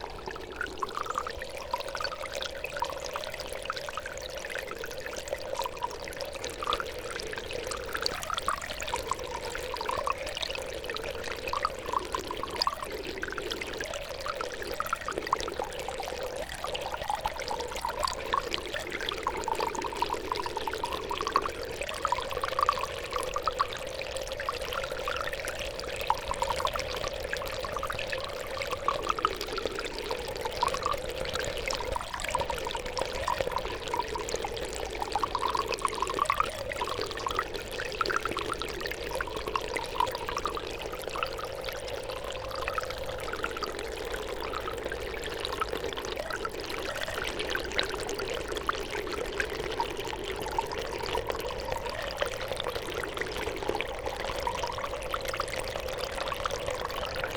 moss garden, Studenice, Slovenija - moss garden, almost dry, flux
Poljčane, Slovenia, 5 August 2015, 15:00